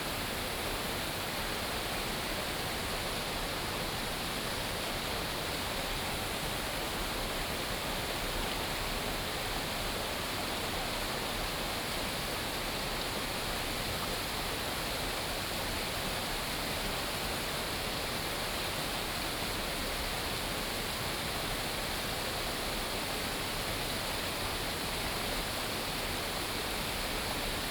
Zhonggua River, Puli Township 桃米里 - Stream
Stream sound
Binaural recordings
Sony PCM D100+ Soundman OKM II